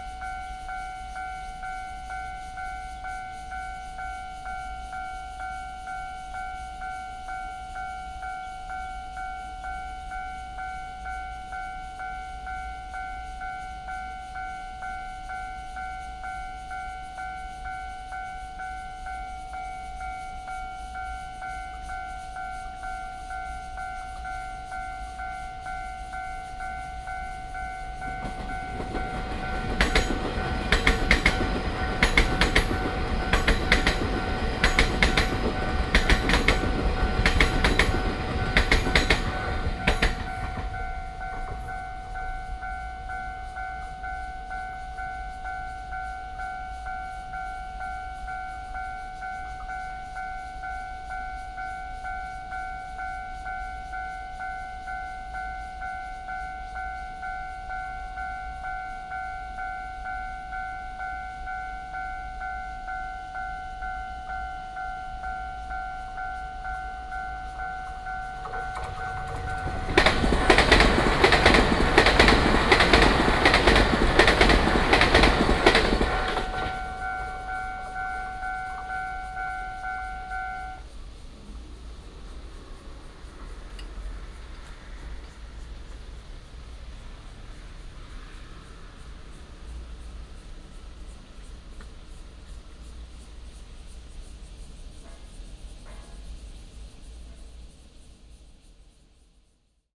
Next to the tracks, Factory sound, Cicada cry, Traffic sound, The train runs through, Railroad Crossing